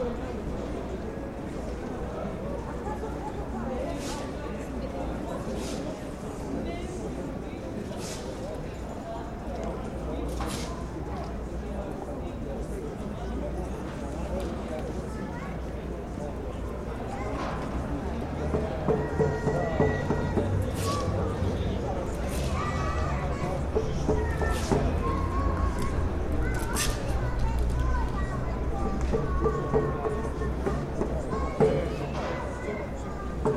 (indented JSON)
{
  "title": "Busker Boy, Pozorišni trg, Novi Sad, Serbia - Busker Boy",
  "date": "2013-04-12 19:53:00",
  "description": "A little boy is busking his very own version of the folk song Ederlezi, having become an anthem of sorts for Balkan romantics. The crowds passing by seem to enjoy one of the first spring evenings strolling on Novi Sad's Korzo. Next to me there's a bunch of vagrants gathering, sipping their Jelen Pivo and getting ready for some adventure.\nFor the following day, the ruling nationalist Serbian Progressive Party called for a protest against the social democratic leadership of the autonomous Vojvodina province. The city was covered with posters featuring slogans like \"We won't give away our Vojvodina\" or \"Novi Sad - Capital City of Serbia\", many of which having been pulled down, though. On the very day of the protest, a friend counted around 200 buses, mainly from places in the rest of Serbia, parked along Novi Sad's Danube bank.",
  "latitude": "45.25",
  "longitude": "19.84",
  "altitude": "85",
  "timezone": "Europe/Belgrade"
}